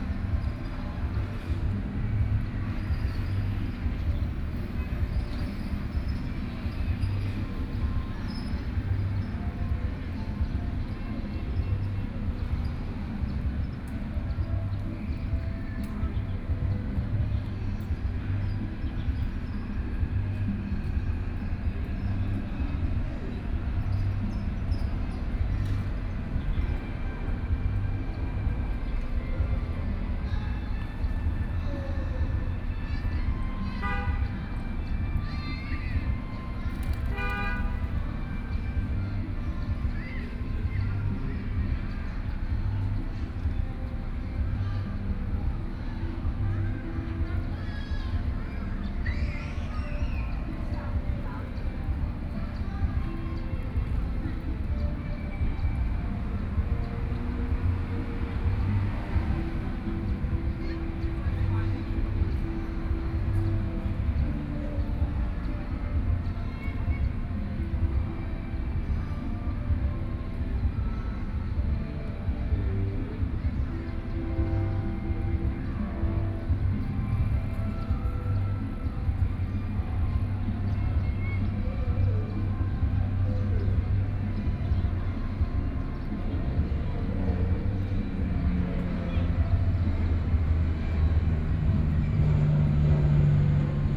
Yuanshan Park - Taipei EXPO Park - Holiday parks
Dove, Aircraft flying through, Dogs barking, Sony PCM D50 + Soundman OKM II